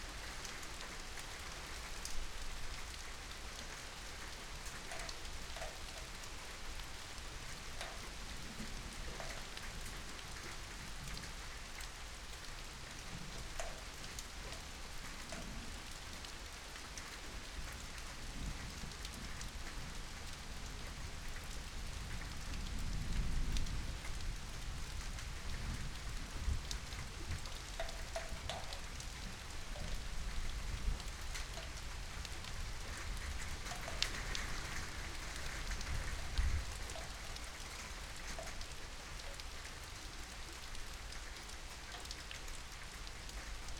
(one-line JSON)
{"title": "Melle, Bakumerst. Germany - Thunderstorm, Rain and Birds", "date": "2017-06-15 18:00:00", "description": "Recorded with Zoom H6 earworm 3 microphone and dummyhead, use headphones", "latitude": "52.22", "longitude": "8.32", "altitude": "85", "timezone": "Europe/Berlin"}